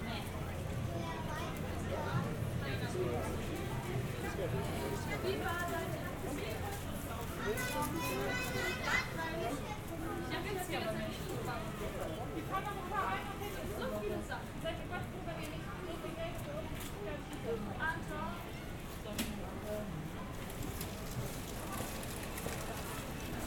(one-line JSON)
{"title": "LegoLand, Denmark, at Lego shop", "date": "2022-04-03 16:00:00", "description": "atanding at the entrance to Lego shop in Legoland. Sennheiser Ambeo smart headset.", "latitude": "55.73", "longitude": "9.13", "altitude": "65", "timezone": "Europe/Copenhagen"}